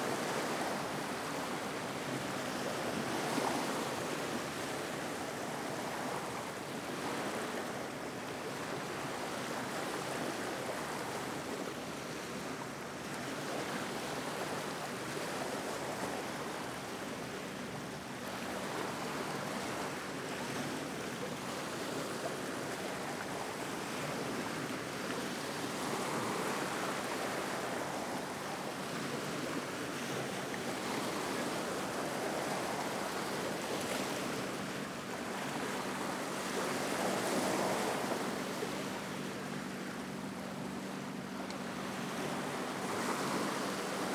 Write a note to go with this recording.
Plage de Caliete - Javea - Espagne, Ambiance - 2, ZOOM F3 + AKG C451B